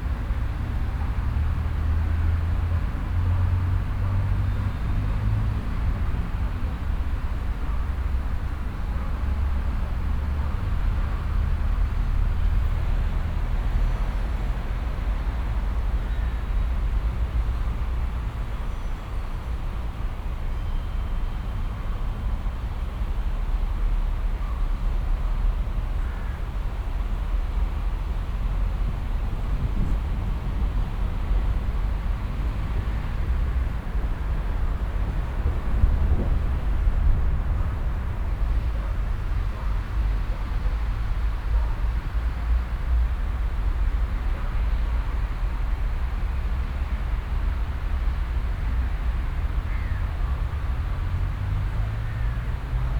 Gruia, Klausenburg, Rumänien - Cluj, Fortress Hill project, emotion tube 2
At the temporary sound park exhibition with installation works of students as part of the Fortress Hill project. Here the sound of screams created with the students during the workshop and then arranged for the installation coming out of concrete tube at the park. In the break beween the screams and in the background traffic, birds and city noise.
Soundmap Fortress Hill//: Cetatuia - topographic field recordings, sound art installations and social ambiences
Cluj-Napoca, Romania, 29 May 2014